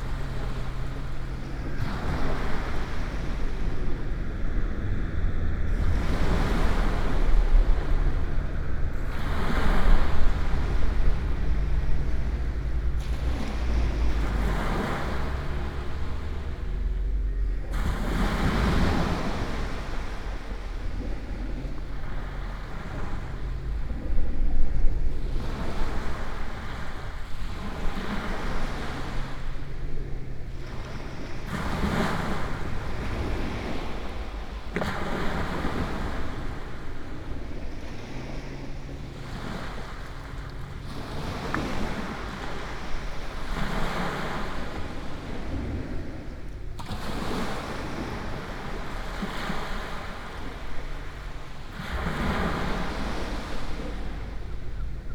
Morning meditation on Swanage beach. Recorded on a matched pair of Sennheiser 8020s, Jecklin Disk and SD788T.
Swanage, UK, 24 August 2017